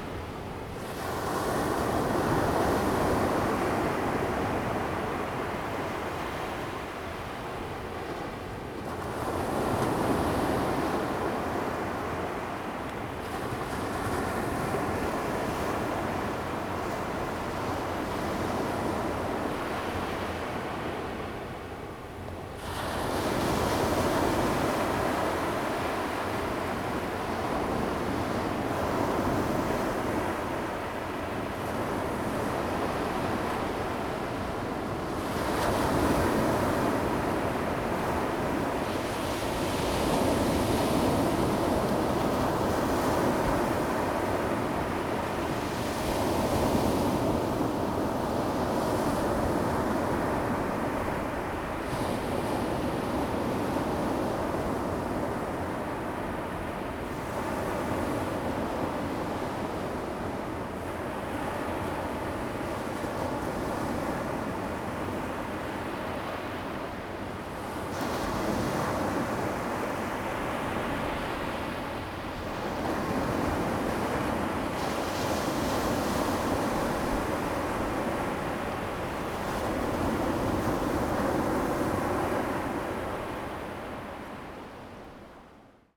Qianzhouzi, 淡水區, New Taipei City - At the beach
On the beach, Sound of the waves
Zoom H2n MS+XY